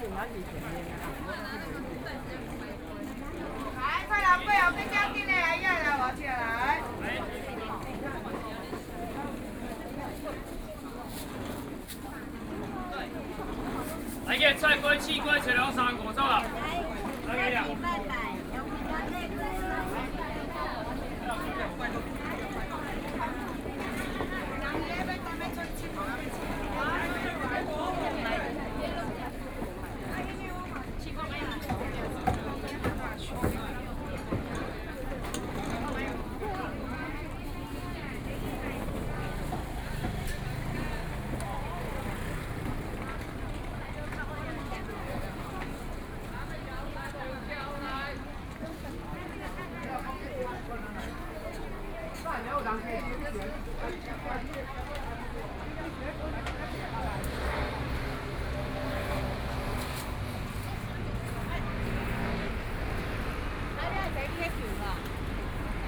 中山區集英里, Taipei City - Traditional Market
walking in the Traditional Market
Sony PCM D50+ Soundman OKM II